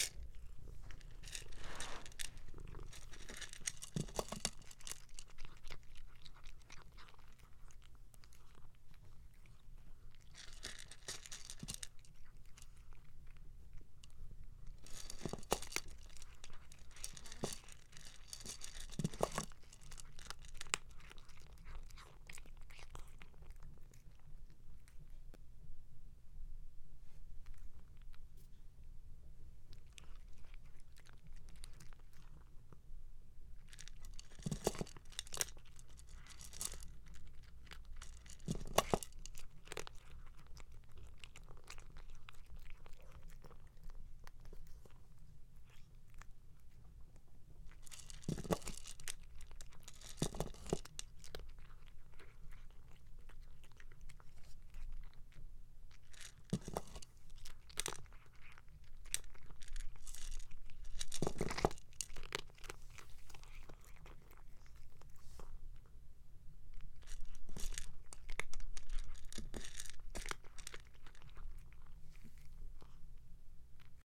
Marble Rock Way, Monroe, GA, USA - Cat Eating Breakfast
After meowing and scratching at the door for 10 minutes, the cat finally gets her owner to wake up and give her her breakfast. She eats it quick, even if she got distracted by a noise out in the hallway for a few seconds, and seems to enjoy every bite. I sat on the floor with her, placing a small mic behind her food dish to record this.
Georgia, United States of America